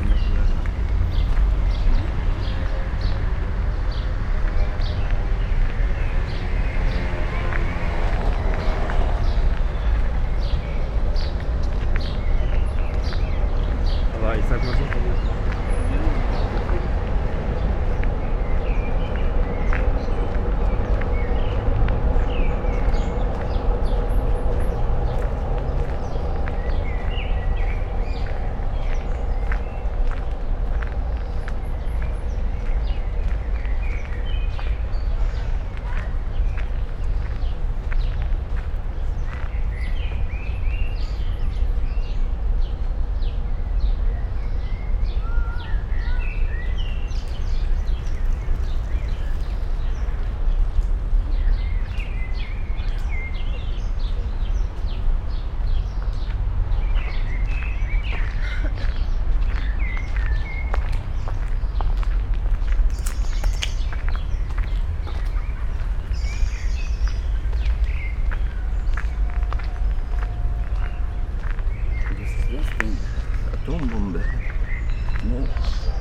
Kreuzberg, Berlin, Germany - und was ist das den? atombombe? ...
... was a comment of a man siting on a bench, as a reaction on my appearance, while slow walking on sandy pathway close to the canal with recorder in my hand and microphones on my head
Berlin, Deutschland, European Union, 16 May 2013, 20:00